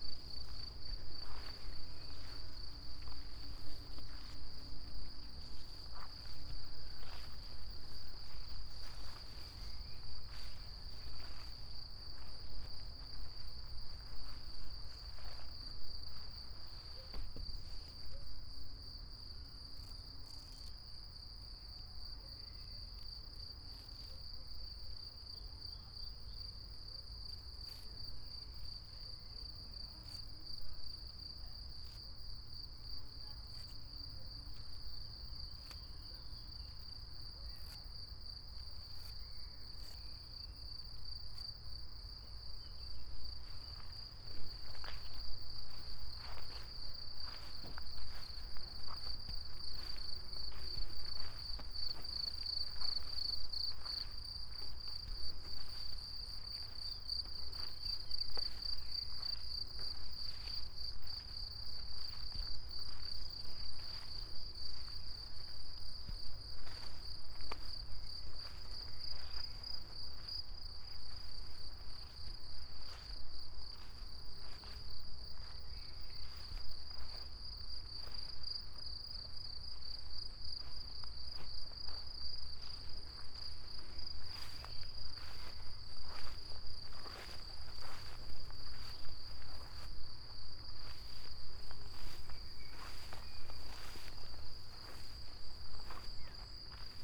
path of seasons, Piramida, Maribor, Slovenia - walk with silky red disc
high grass, crickets, silk, steps, wind